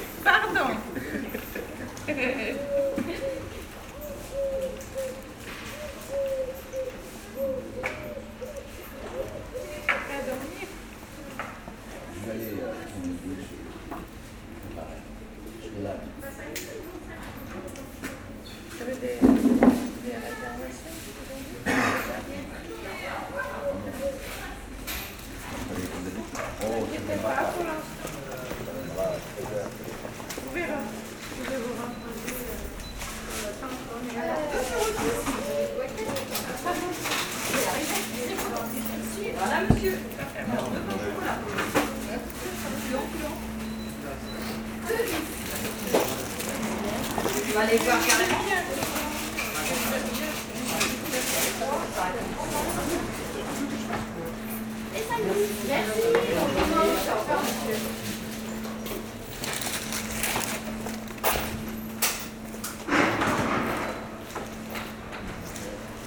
Tours, France - Noisy morning in the Cordeliers street
On a sunny sunday morning, bars and restaurants are slowly opening. Tenants clean the places. Peole are discussing with coffee, on a noisy atmosphere near the bakery. It's a lovely morning in the old city of Tours.